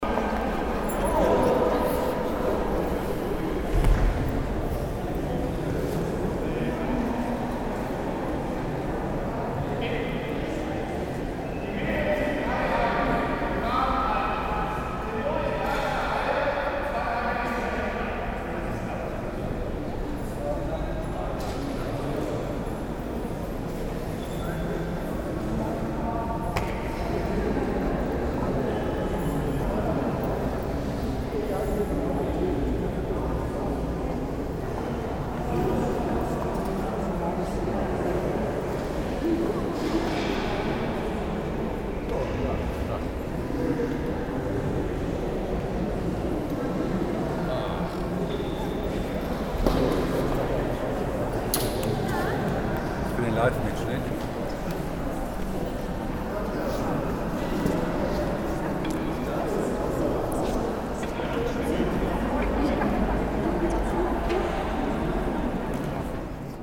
cologne, zülpicher platz, inside the herz jesu church
inside a church, a choir gathering for rehearsal
soundmap nrw - social ambiences and topographic field recordings